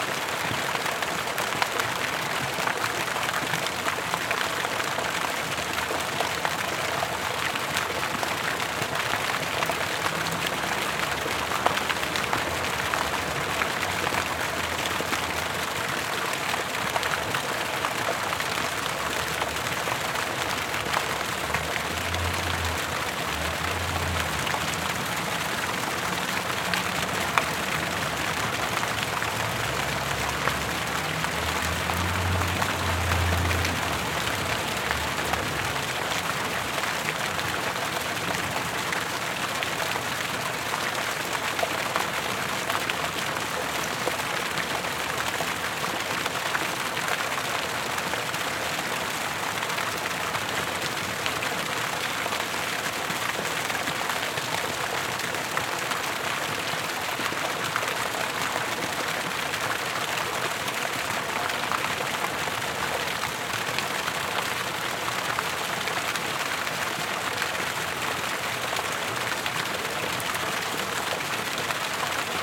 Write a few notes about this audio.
Sounds from the fountain Beauty at the New York Public Library entrance.